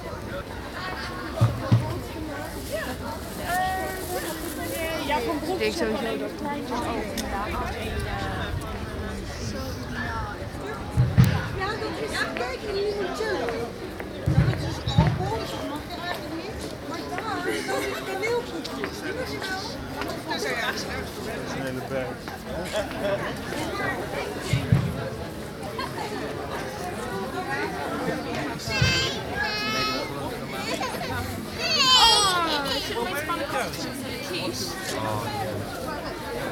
Binaural recording made on the bi-annual 'Home Made Marker' in the Zeehelden Quater of The Hague.